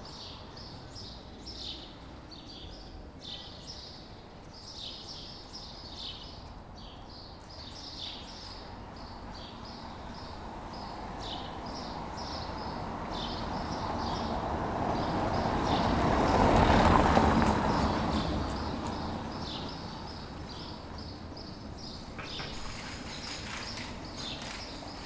Rue de la Légion dHonneur, Saint-Denis, France - Intersection of R. Legion dhonneur and R. des Boucheries
This recording is one of a series of recording, mapping the changing soundscape around St Denis (Recorded with the on-board microphones of a Tascam DR-40).